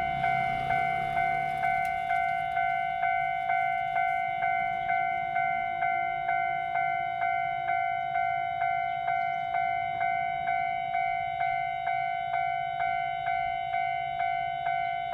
Mingde St., 花壇鄉 - in the railroad crossing
in the railroad crossing, Bird call, Traffic sound, The train passes by
Zoom H2n MS+ XY